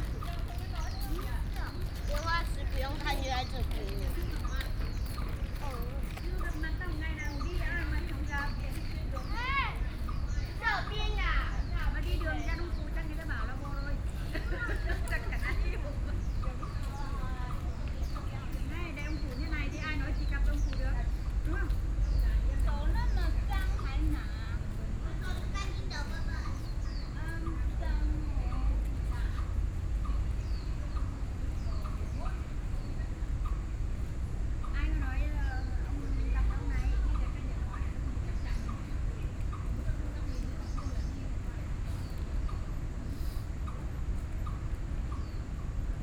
Taipei Botanical Garden - Hot and humid afternoon
Hot and humid afternoon, Foreign caregivers and people coming and going, Sony PCM D50 + Soundman OKM II
Taipei City, Taiwan, 13 September 2013